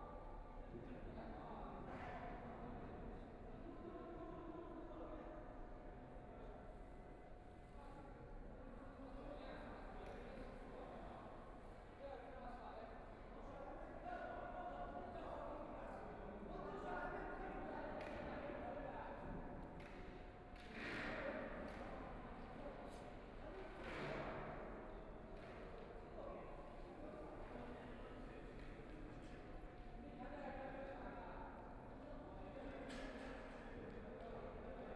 Power Station of Art, Shanghai - in the Museum
Standing on the third floor hall museum, The museum exhibition is arranged, Binaural recording, Zoom H6+ Soundman OKM II